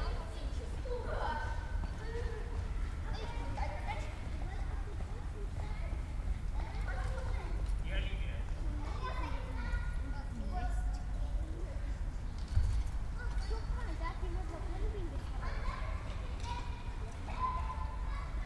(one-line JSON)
{"title": "Stallschreiberstraße, Berlin, Deutschland - new building quarter", "date": "2020-11-08 15:55:00", "description": "yard ambience at the newly build residential area near Jakobstr / Stallschreiberstr. A few kids playing, echos of their voices and other sounds, reflecting at the concrete walls around.\n(Sony PCM D50, DPA4060)", "latitude": "52.51", "longitude": "13.41", "altitude": "37", "timezone": "Europe/Berlin"}